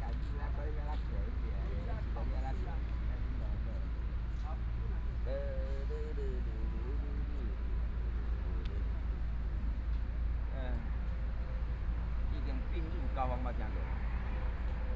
Old man playing chess and Dialogue among the elderly, Traffic Sound, Binaural recordings, Zoom H4n+ Soundman OKM II
Zhongshan District, 榮星花園, 20 January